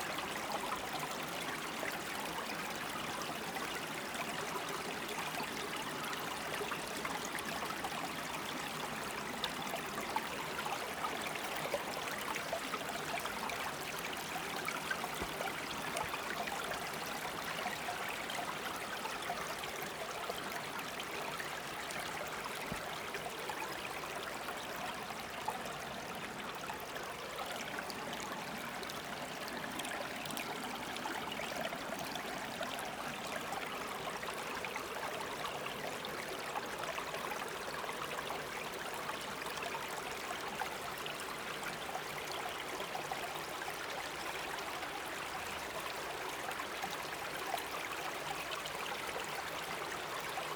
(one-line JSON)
{"title": "Bubbling streams amongst granite rocks, Baie-des-Rochers, QC, Canada - Bubbling streams amongst granite rocks", "date": "2021-10-25 13:22:00", "description": "The small river that meets the St Lawrence at the Baie des Rochers. Two mics suspended just above the water surface were moved slowly to bring out the infinite number of different ripples and eddies present.", "latitude": "47.95", "longitude": "-69.81", "altitude": "15", "timezone": "America/Toronto"}